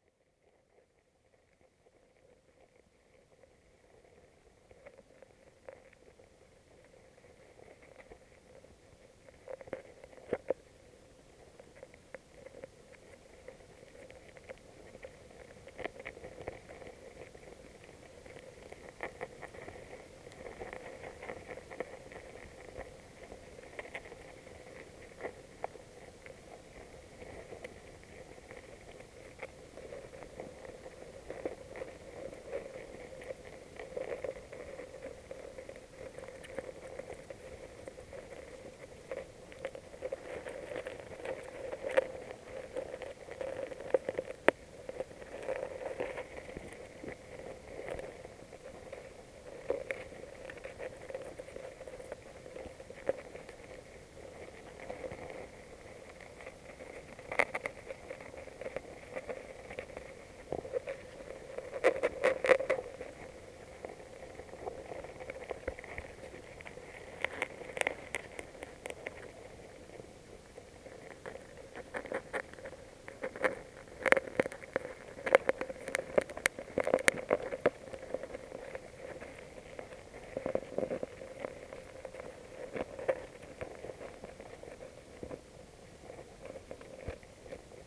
Poncey-sur-l'Ignon, France - Seine spring and gammarids
This is the Seine river spring. The river is 777,6 km long. I walked along it during one year and 3 month, I Went everywhere on it. This recording is the first centimeter of the river. It's the Seine spring. In the streamlet, there's a lot of gammarids rummaging into the water, the ground and the small algae. This is the precious sound of the spring.
29 July 2017, 14:30